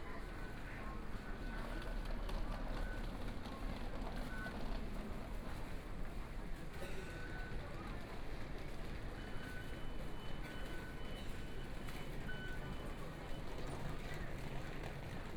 Taipei, Taiwan - Return home
Traditional New Year, A lot of people ready to go home, Taipei Main Station, MRT station entrances, Messages broadcast station, Zoom H4n+ Soundman OKM II